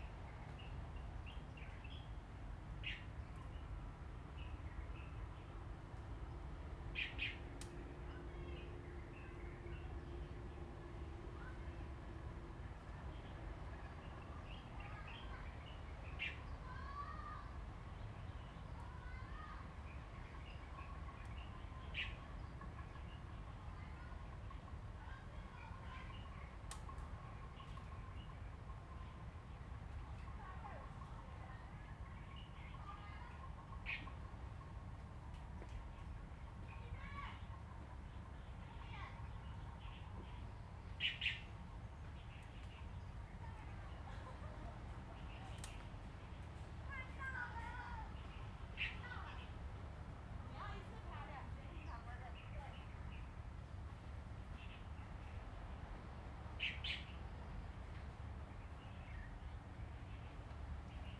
天福村, Hsiao Liouciou Island - Birds singing
In the woods, Birds singing, Sound of the waves, Traffic Sound
Zoom H2n MS+XY